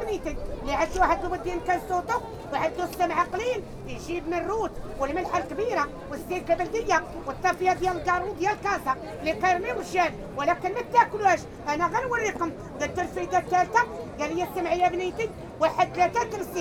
Jemaa El Fna, Rahba Kedima, Marrakesh - intense storytelling
a woman sits on the ground, with a little wooden box and an old magazin, and talks very fast and intense and without a break.
(Sony D50, DPA4060)
Marrakesh, Morocco, 26 February 2014